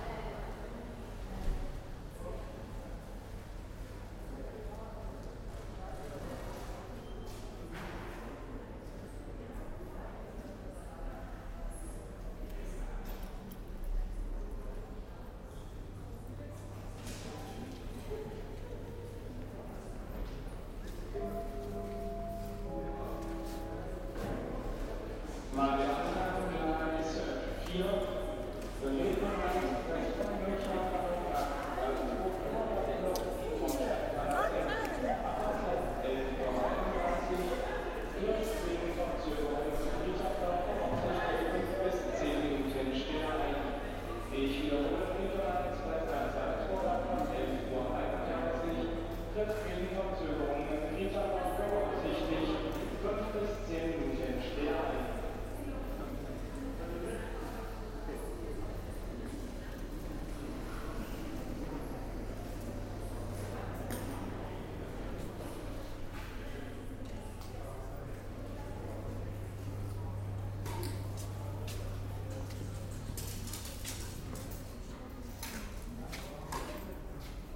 Rosenheim, main station, entrance hall
recorded june 7, 2008. - project: "hasenbrot - a private sound diary"